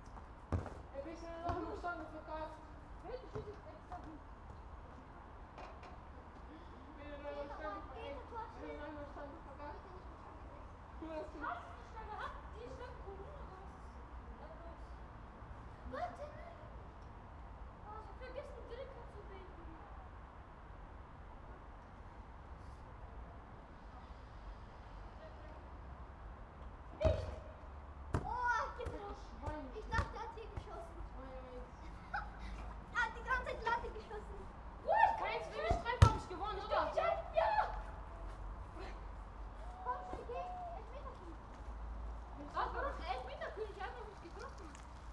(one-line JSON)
{"title": "Bellevue Park, Bindermichl Tunnel, Linz - soccer field", "date": "2020-09-08 17:10:00", "description": "kids playing soccer\n(Sony PCM D50)", "latitude": "48.27", "longitude": "14.30", "altitude": "275", "timezone": "Europe/Vienna"}